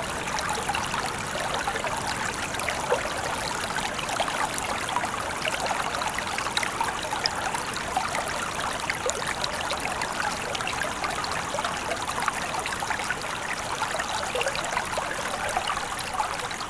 Sauclières, France - Stream
A small stream in the forest of Sauclières. This is a very quiet place.
Nant, France, 1 May 2016